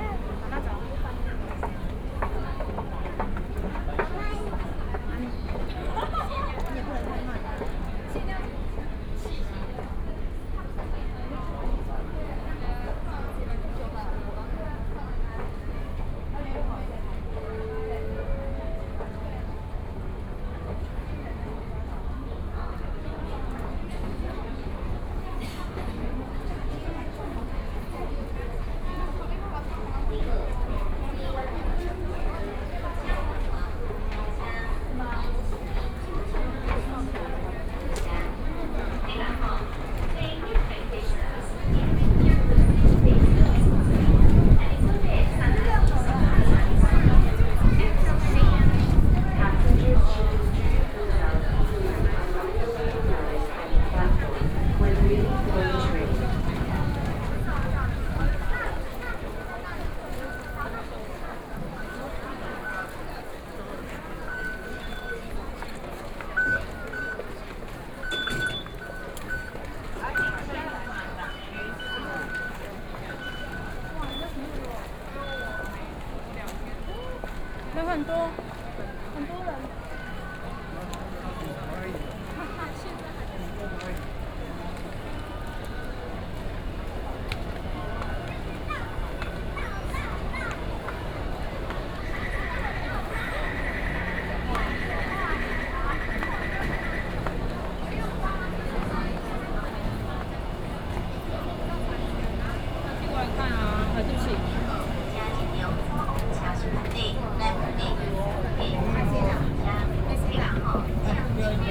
From the square go into the department store, Via bus transit center into MRT stations, The crowd, Binaural recordings, Sony PCM D50 + Soundman OKM II